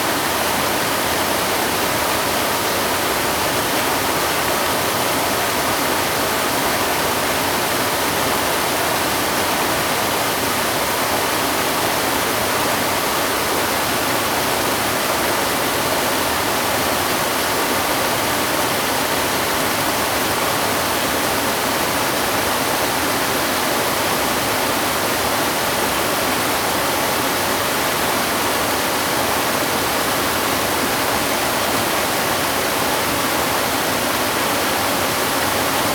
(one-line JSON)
{"title": "五峰旗瀑布, 礁溪鄉大忠村, Yilan County - Waterfalls and rivers", "date": "2016-12-07 09:35:00", "description": "Waterfalls and rivers\nZoom H2n MS+ XY", "latitude": "24.83", "longitude": "121.75", "altitude": "145", "timezone": "GMT+1"}